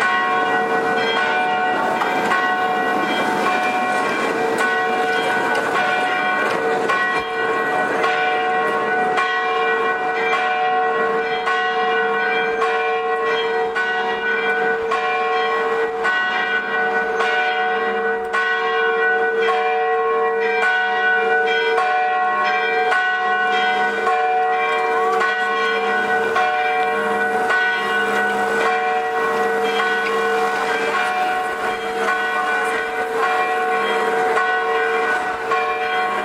Staré Mesto, Slovenská republika - The Bells of Blumental church